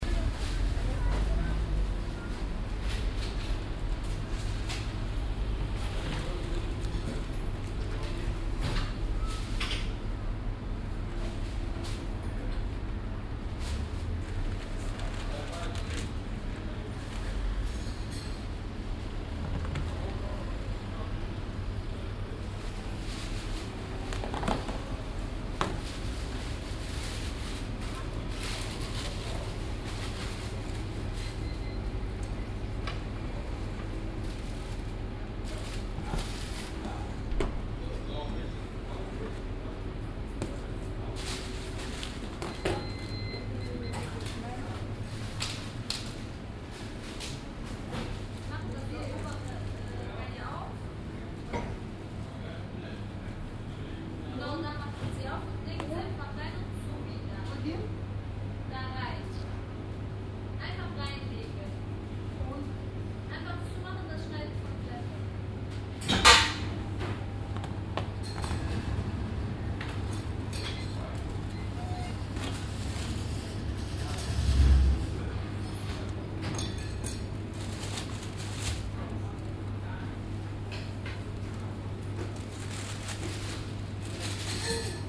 cologne, south, chlodwigplatz, sb bäckerei
soundmap: cologne/ nrw
sb bäckerei am chlodwigplatz, nachmittags
project: social ambiences/ listen to the people - in & outdoor nearfield recordings